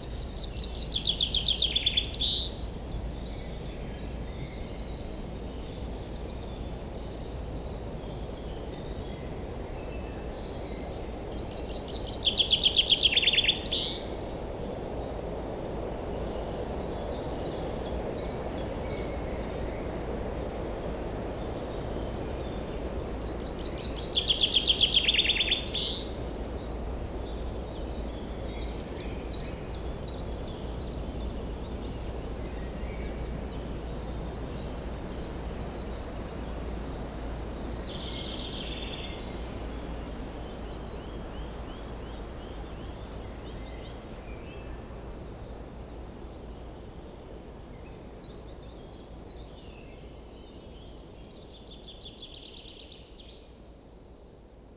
In the woods, the repetitive but pleasant call from the Common Chaffinch. It's springtime, this bird is searching a wife ;-)